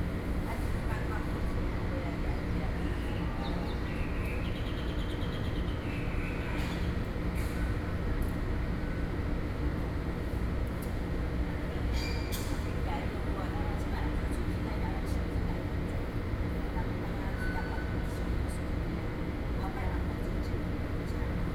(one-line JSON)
{"title": "Fuxinggang Station, Beitou - Hot afternoon", "date": "2013-07-11 17:03:00", "description": "In the MRT exit, A group of people living in the vicinity of the old woman sitting in the exit chat, Sony PCM D50 + Soundman OKM II", "latitude": "25.14", "longitude": "121.49", "altitude": "10", "timezone": "Asia/Taipei"}